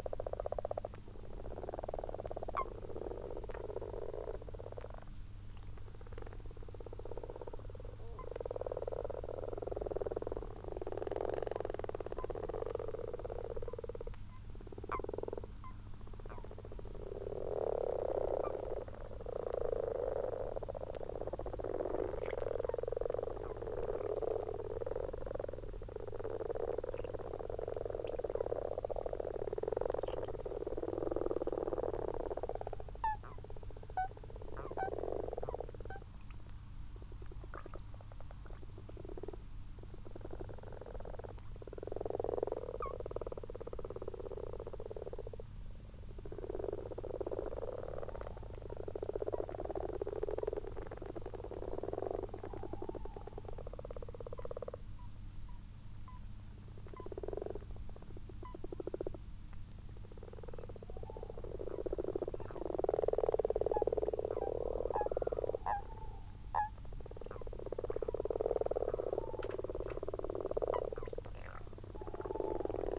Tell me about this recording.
common frogs and common toads in a garden pond ... xlr sass on tripod to zoom h5 ... bird call ... distant tawny owl 01:17:00 plus ... unattended time edited extended recording ...